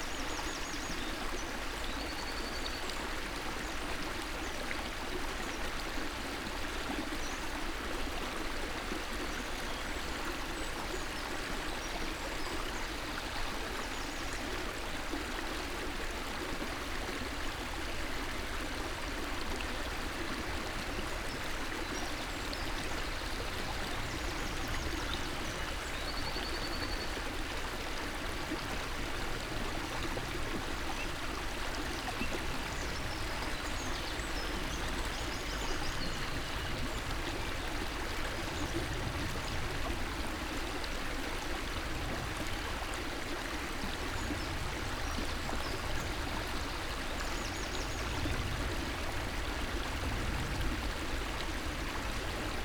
Klein Wall, Grünheide - river Löcknitz flow
river Löcknitz flow
(SD702, MKH8020 AB)
Grünheide (Mark), Germany, April 2016